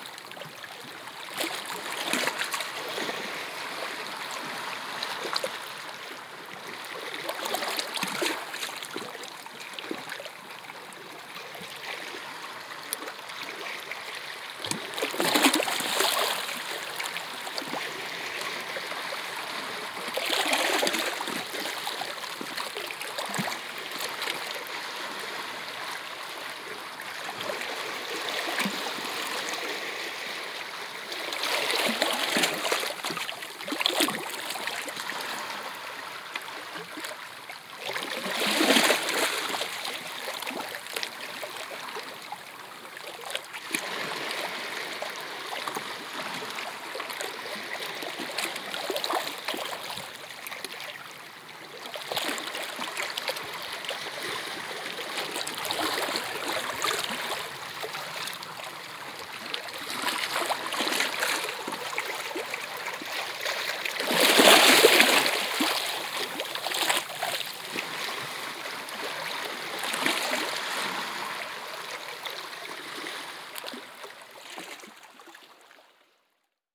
Cap de l'Horta, Alicante, Spain - (11 BI) Waves on rocks
Binaural recording laying down on rocks at Cap de l'Horta.
Recorded with Soundman OKM on Zoom H2n.